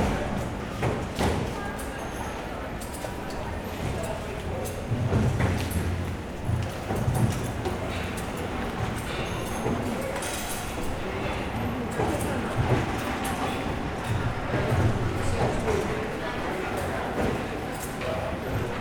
neoscenes: end of the tunnel